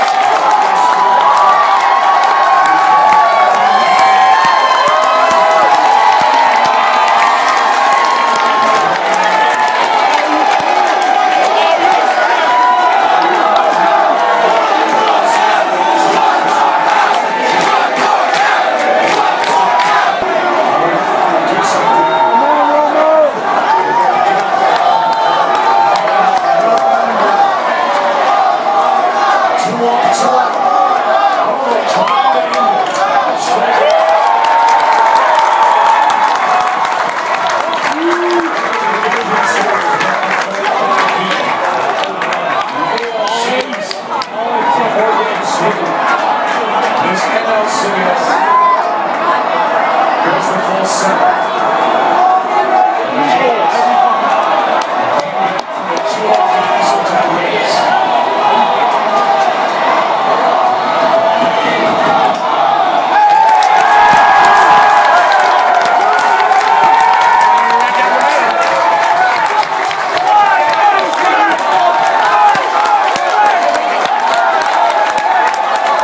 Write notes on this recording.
fun crowd, raining, hot inside, iphone